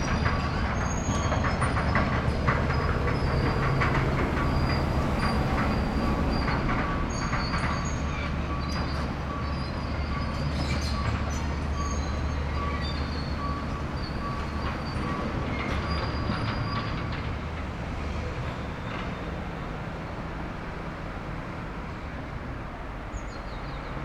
another area of Poznan that is heavily under construction. here a viaduct being rebuilt. an excavator rumbling across the construction area, whizzing and squeaking along.